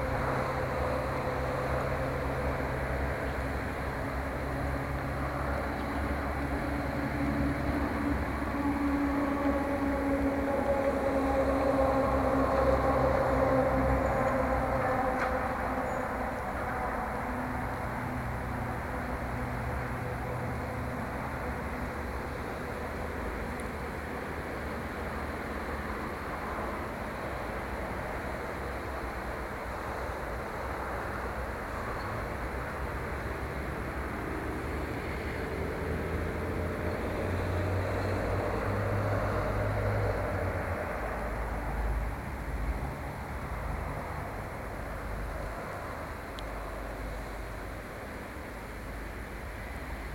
Sloneczne lake, Szczecin, Poland
Sloneczne lake in the night.